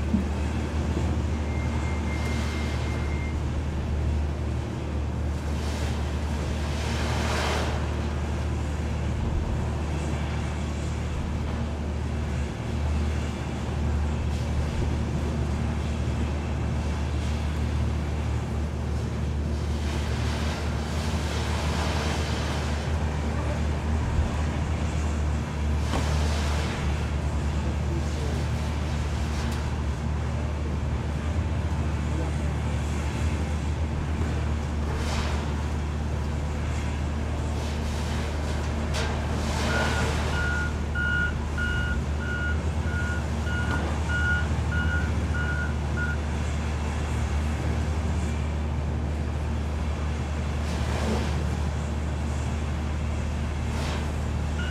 Rusfin, Magallanes y la Antártica Chilena, Chile - storm log - russfin sawmill
busy sawmill, wind 20 km/h, ZOOM F1, XYH-6 cap
Forestal Russfin, 1.2MW central power station using forestry biomass, for a lenga wood sawmill in the middle of Tierra del Fuego.
20 February 2021, ~17:00